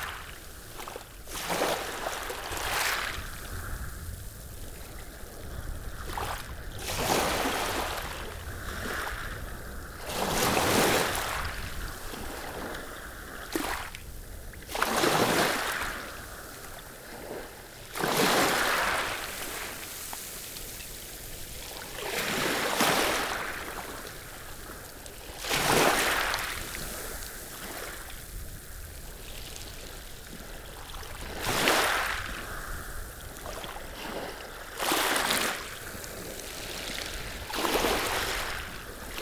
Cayeux-sur-Mer, France - The sea at Pointe du Hourdel
Sound of the sea, with waves lapping on the gravels, at pointe du Hourdel, a place where a lot of seals are sleeping.